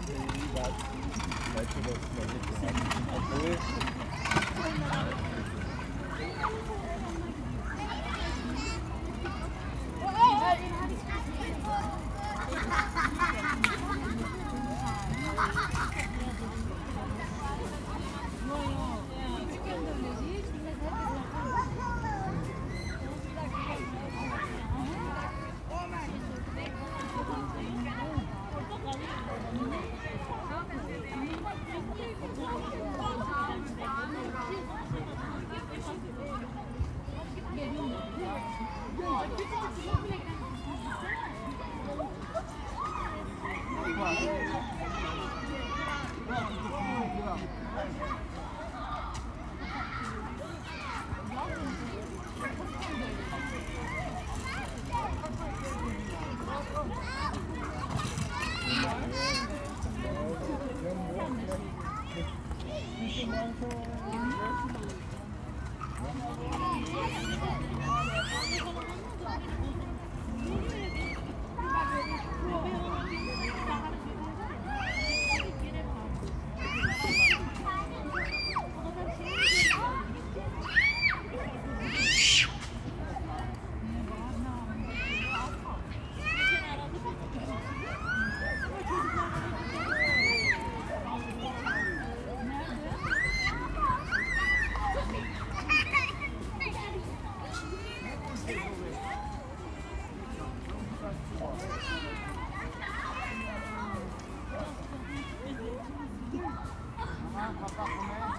Bindermichl Tunnel, Linz, Austria - Younger kid playing, scoters, mums chatting. Nice evening atmos
Play area for younger kids. Much used in the evening on a beautiful day like this.
Oberösterreich, Österreich